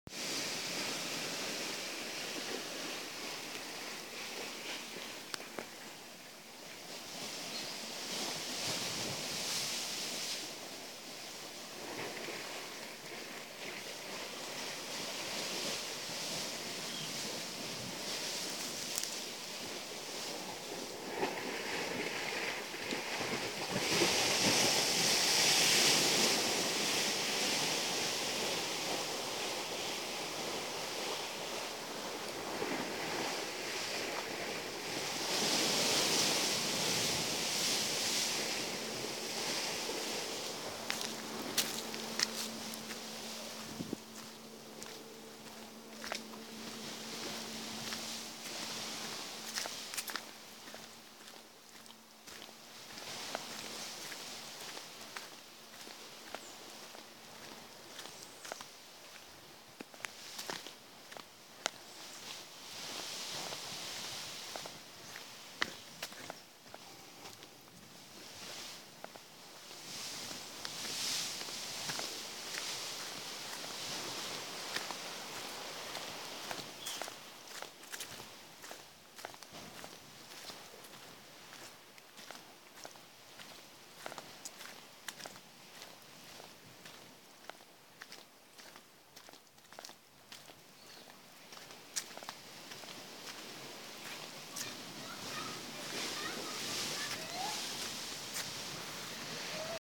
Sainte-Anne, Martinique - Trace des Caps
Sur le sentier reliant Sainte Anne à la plage des Salines, on entend l'océan en contre-bas, un hors bord, des oiseaux, en final l'appel d'une femme.